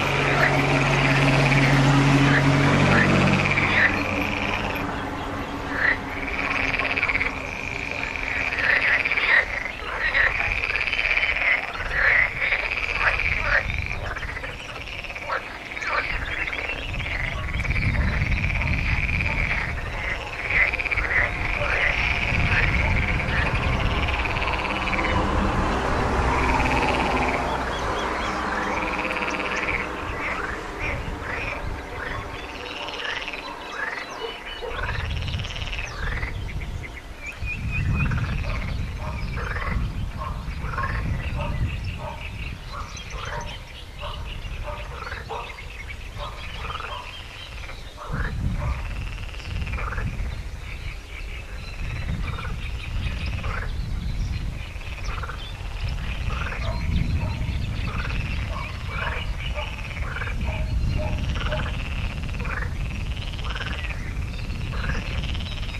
hohenau, habitat
habitat at the ponds of the former surgar factory of hohenau, in the background the road from the slovak border